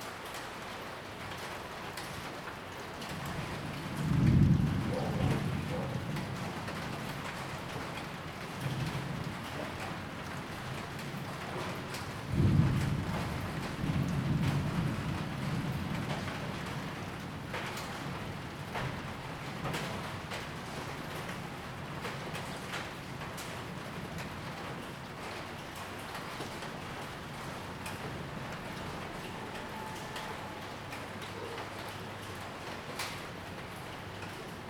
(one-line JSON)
{"title": "大仁街, Tamsui District, New Taipei City - heavy rain", "date": "2016-04-13 06:05:00", "description": "thunderstorm, Traffic Sound\nZoom H2n MS+XY", "latitude": "25.18", "longitude": "121.44", "altitude": "45", "timezone": "Asia/Taipei"}